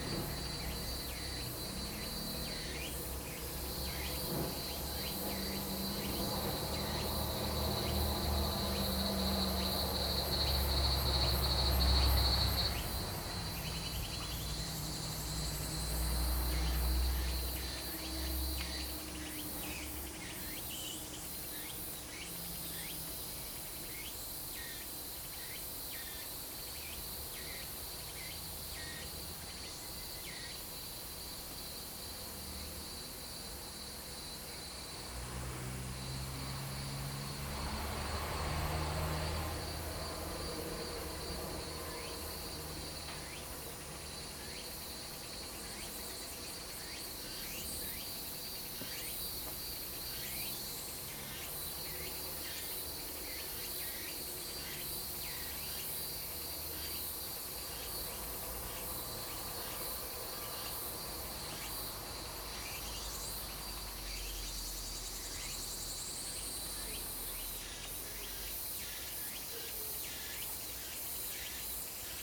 Woody House, 桃米里 Puli Township, Nantou County - Cicadas cry and Birds singing
Cicadas cry, Birds singing
Zoom H2n MS+XY